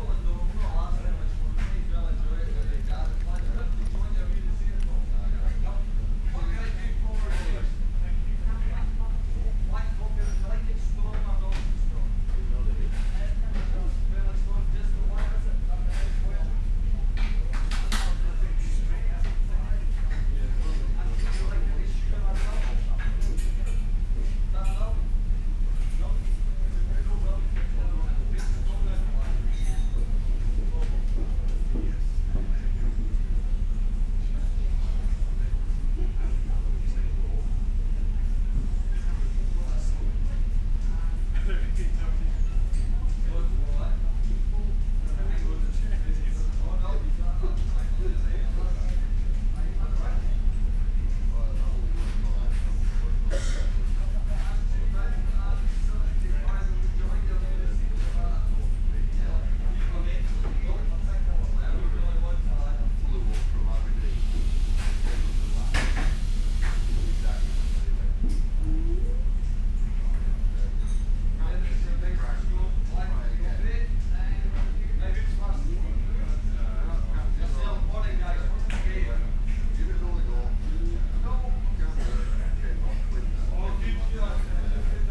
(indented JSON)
{
  "title": "Unnamed Road, United Kingdom - ferry ... cafeteria ...",
  "date": "2018-05-19 07:00:00",
  "description": "Kennecraig to Port Ellen ferry to Islay ... the cafeteria ... lavaliers mics clipped to baseball cap ...",
  "latitude": "55.81",
  "longitude": "-5.48",
  "altitude": "1",
  "timezone": "Europe/London"
}